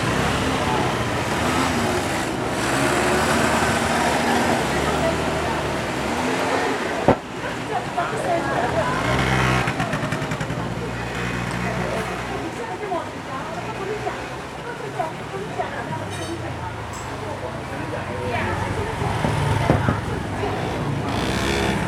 Siwei St., Yonghe Dist., New Taipei City - In the market
In the market, Sony ECM-MS907, Sony Hi-MD MZ-RH1
New Taipei City, Taiwan, 21 January